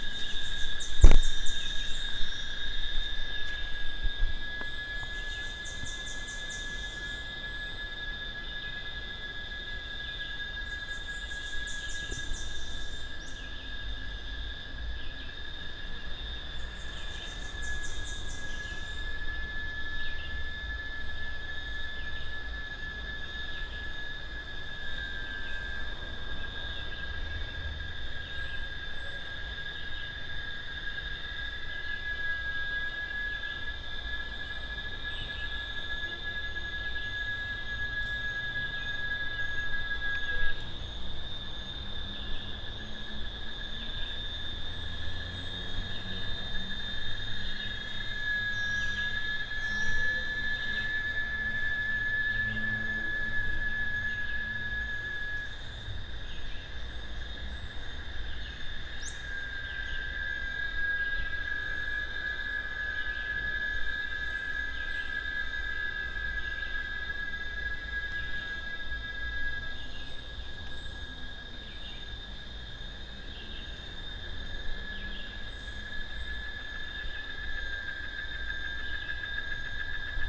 Cruz das Almas, BA, Brasil - Mata de Cazuzinha
Captação feita na Mata de Cazuzinha, zona de preservação em Cruz Das Almas-Bahia. Captacao feita com um PCM DR 40
Bahia, Brazil, 2 March